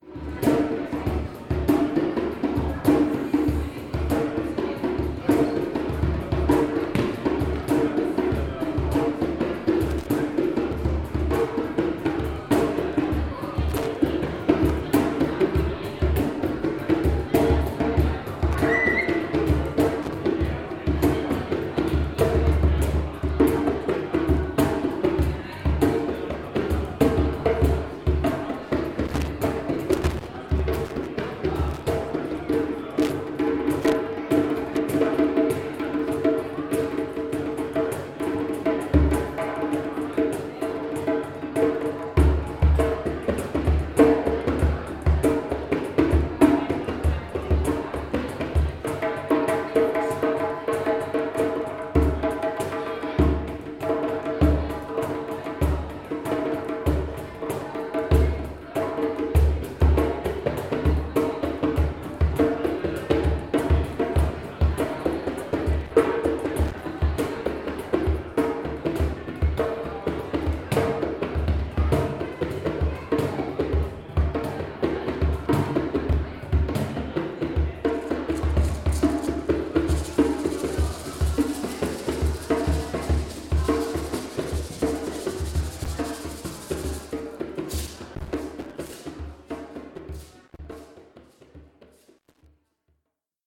Helios Theatre, Hamm, Germany - Yemi Ojo warm-up jam...
… we are with a group of young people in the beautiful attic Café above Helios Theatre… a workshop organized by FUgE with Marcos da Costa Melo leading it… it’s break time… Yemi Ojo, supported by his son Leon get a jamming session going on the drums…